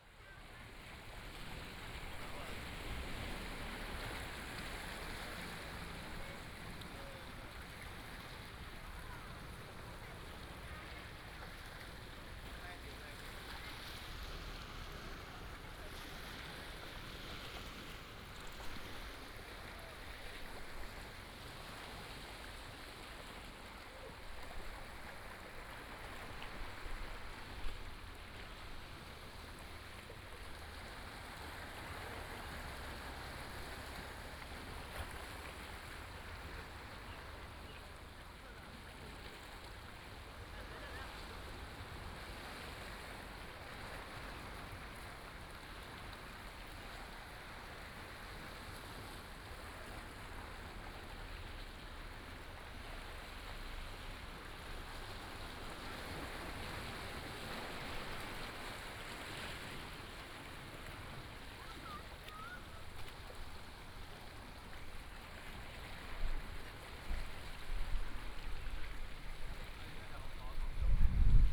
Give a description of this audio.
at the seaside, Bird sound, Sound of the waves, tide